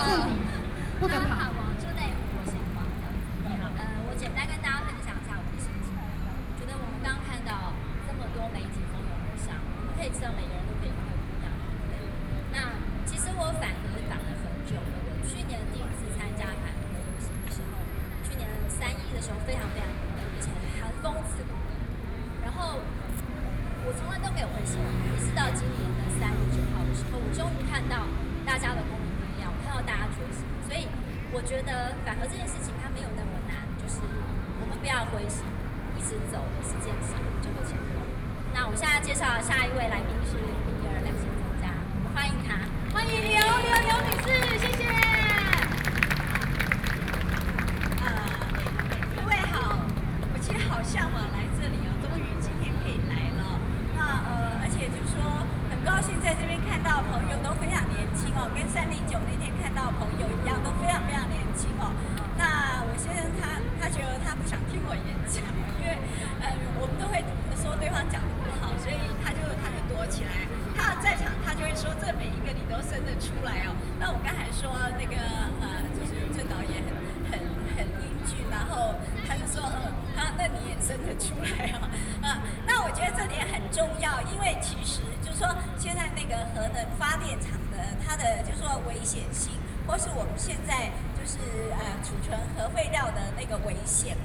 Freedom Plaza, Taipei City - Opposition to nuclear power
Famous writer, speech, Opposition to nuclear power
Binaural recordings
Taipei City, Taiwan